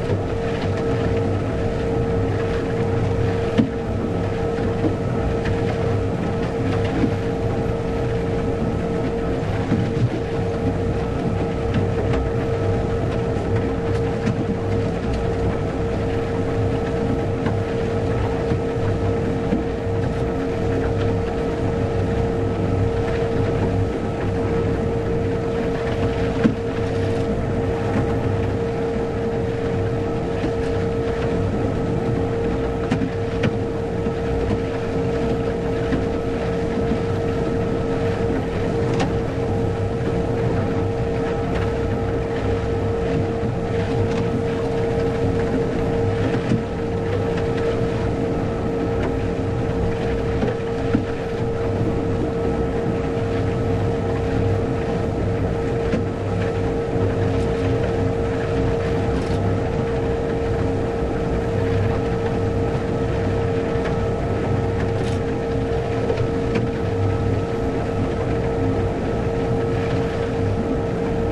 Vancouver Harbour, BC, Canada - Harbour Patrol Boat
recorded aboard the Vancouver Harbour Patrol boat as part of MAC Artist-In-Residence program for CFRO Co-op Radio
8 June 2010, ~14:00